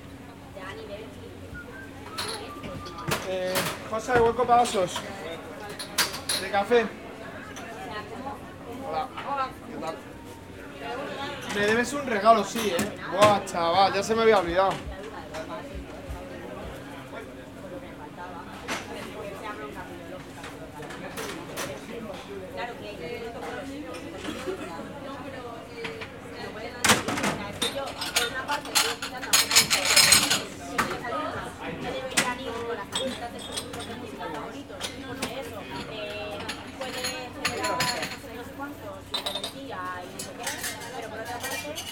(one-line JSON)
{"title": "Calle Marx, Madrid, España - Snack Bar", "date": "2018-11-29 18:30:00", "description": "This audio shows the different sounds that we can hear in the snack bar of the Faculty of Philosophy of the University.\nYou can hear:\n- Waiter / Client talking\n- Dish, Glass, and Cutlery noises\n- Coin noise\n- Background Voices\n- Dishwasher\nGear:\n- Zoom h4n\n- Cristina Ortiz Casillas\n- Erica Arredondo Arosa\n- Carlos Segura García", "latitude": "40.55", "longitude": "-3.70", "altitude": "724", "timezone": "Europe/Madrid"}